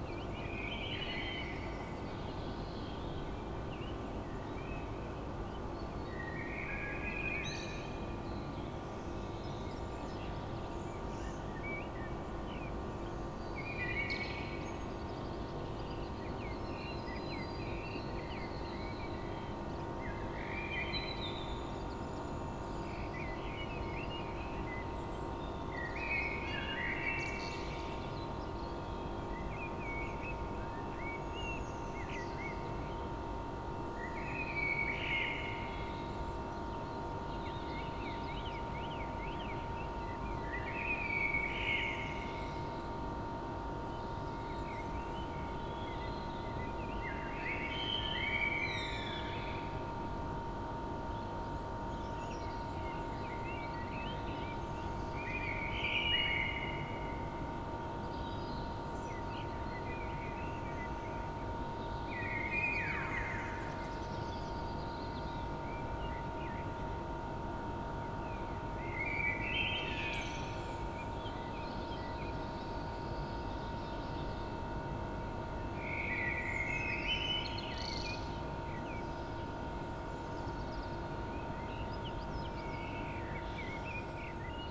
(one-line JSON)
{"title": "Wellington Sq., Oxford, UK - early birds, ventilation, night", "date": "2014-03-13 03:45:00", "description": "for some reason, blackbirds started to sing deep at a cold and foggy night.\nAfter a minute, a ventilation from an opposite basement started to hum.\n(Sony PCM D50)", "latitude": "51.76", "longitude": "-1.26", "altitude": "65", "timezone": "Europe/London"}